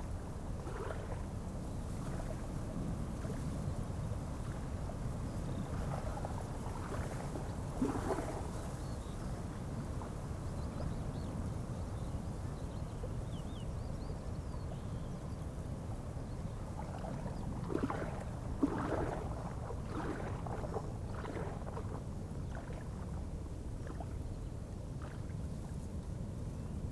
Alte Fährstraße, Letschin - river Oder, wind and waves
river Oder, near Czelin (Poland), light waves and wind in trees
(Sony PCM D50, DPA4060)